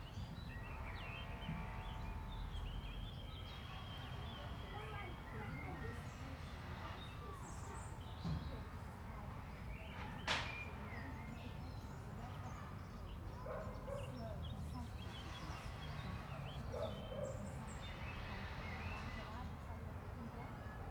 Hampstead Parish Church Churchyard, Hampstead, London - Hampstead Parish Church Churchyard
birds, people chatting, construction site nearby
18°C
5 km/hr 130